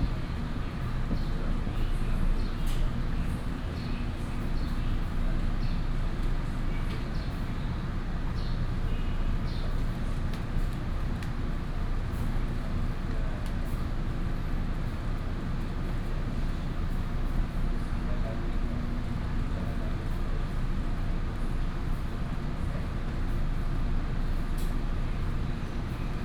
In MRT station platform, In MRT compartment
New Taipei City, Taiwan